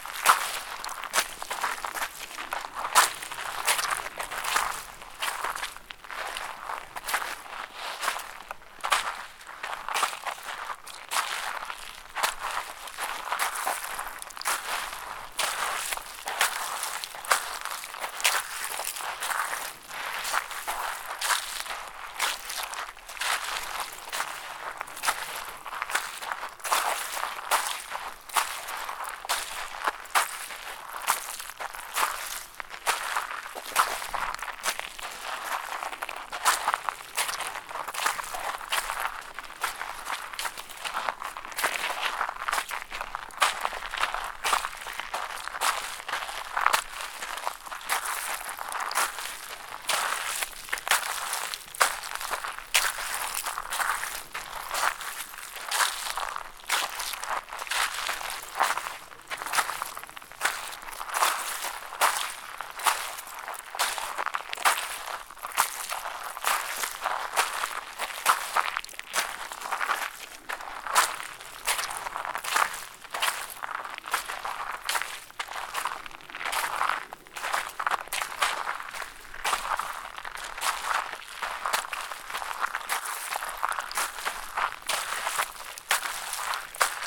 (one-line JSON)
{"title": "Keeler, CA, USA - Walking in Bacterial Pond on Owens Lake", "date": "2022-08-25 11:00:00", "description": "Metabolic Studio Sonic Division Archives:\nWalking on edge of bacterial pond on Owens Dry Lake. Recorded with Zoom H4N recorder", "latitude": "36.45", "longitude": "-117.91", "altitude": "1085", "timezone": "America/Los_Angeles"}